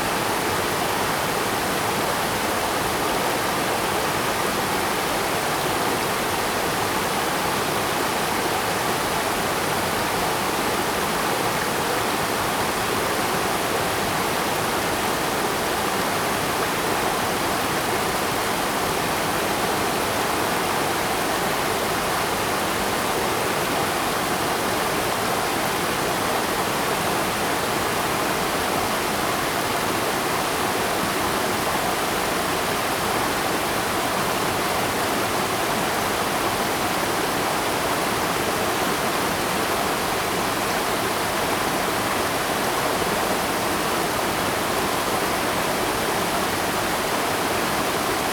五峰旗瀑布, 礁溪鄉大忠村, Yilan County - waterfall
Waterfalls and rivers
Zoom H2n MS+ XY
7 December 2016, 09:30